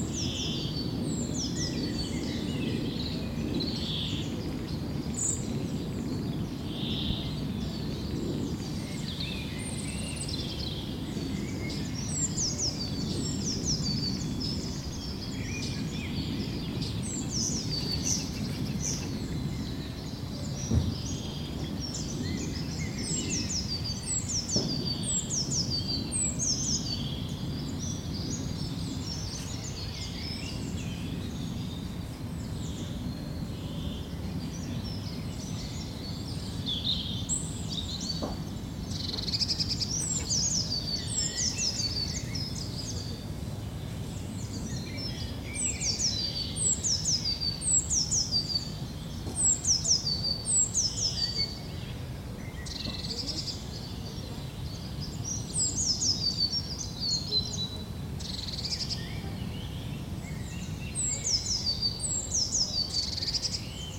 Ermelo, Nederland - Near the Ermelose heide
Random recording in a small forest near Ermelo.
Internal mics of a Zoom H2.